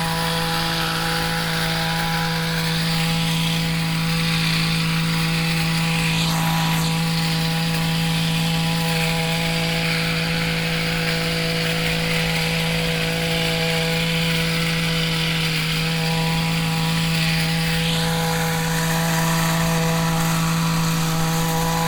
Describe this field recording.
cutting of an hedge close to the river side in the early afternoon, soundmap nrw - social ambiences and topographic field recordings